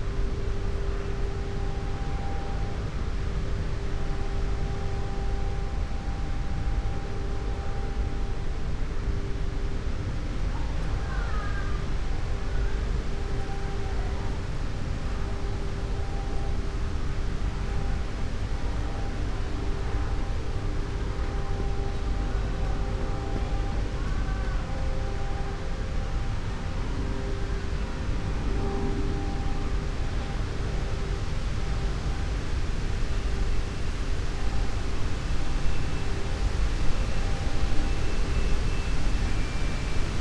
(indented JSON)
{
  "title": "Plänterwald, Berlin, Germany - wind, turning wheel",
  "date": "2015-09-06 17:13:00",
  "description": "Sonopoetic paths Berlin",
  "latitude": "52.49",
  "longitude": "13.49",
  "altitude": "33",
  "timezone": "Europe/Berlin"
}